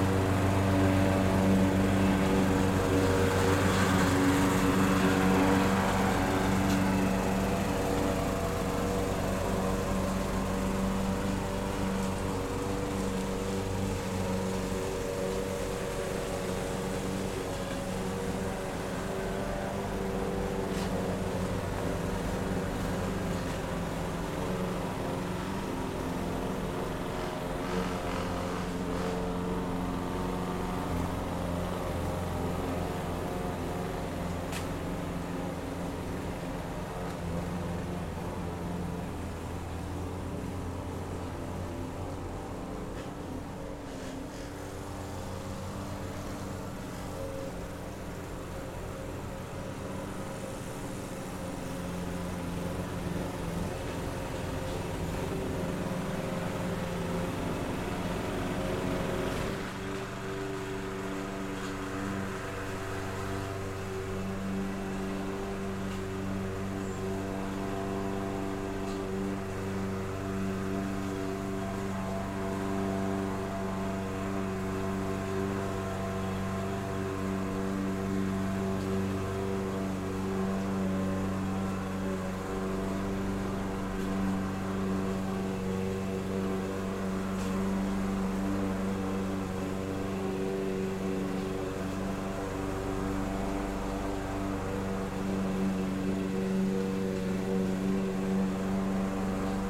Eckernförder Str., Kronshagen, Deutschland - Power mower noise
Infernal noise of two power mower. Zoom H6 recorder, xy capsule